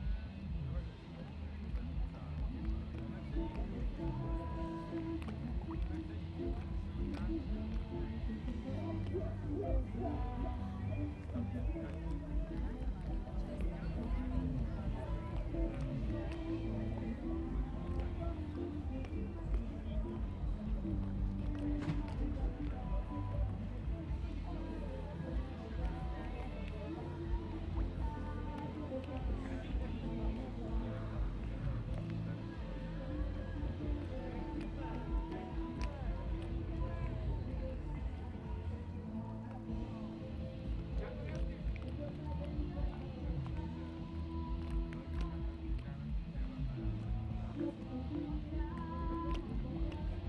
Ambience at Zelífest music festival. Band playing in the background, water in pond plashing gently, quick drum soundcheck, human sounds.
Zoom H2n, 2CH, handheld.